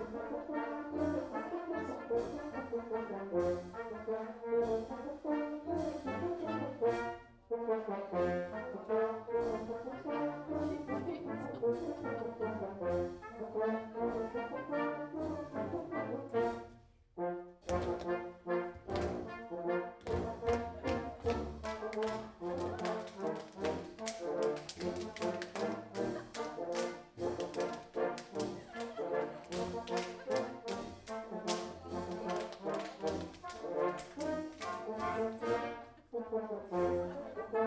Isny im Allgäu, Schuhplattlerprobe - Schuhplattlerprobe